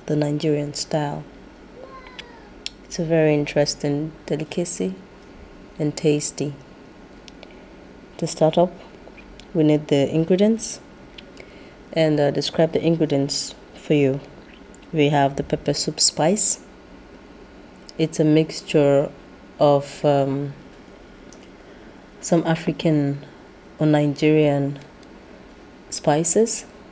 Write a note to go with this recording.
Towards the end of the work day, Chinelo sits to record a recipe for her Nigerian Goat meat pepper soup and is suddenly interrupted...